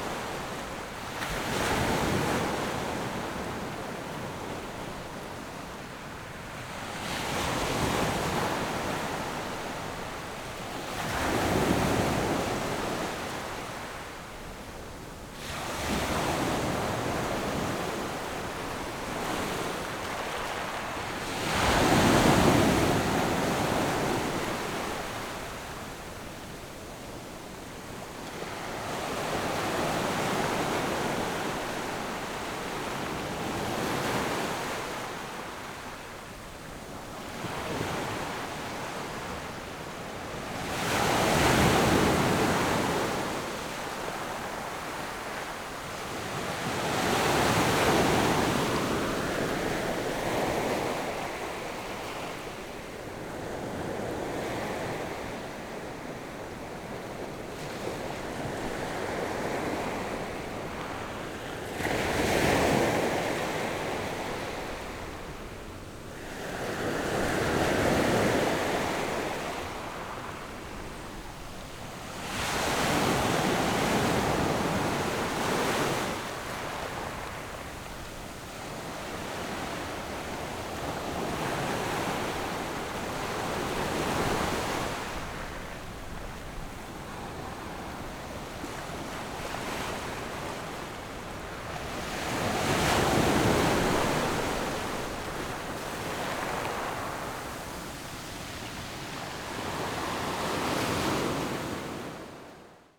Waves and tides, Small beach
Zoom H6 + Rode NT4